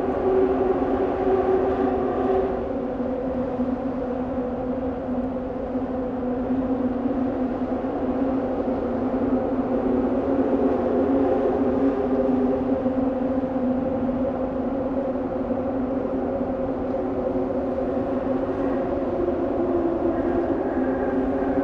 under bridge at river tejo. cars run over metal grades, train tracks below. incredible soundscape.
Lisbon, Portugal, 3 July 2010